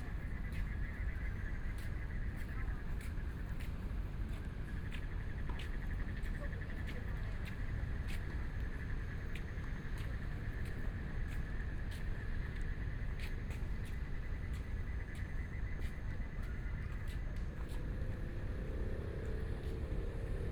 中央公園, Kaohsiung City - Walking through the park
Walking through the park, Frogs sound, Tennis sounds
Kaohsiung City, Taiwan, May 2014